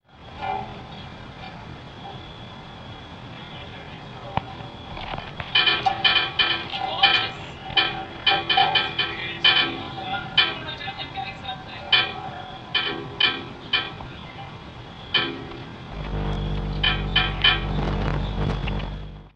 Ulu Pandan Bridge Sunset Way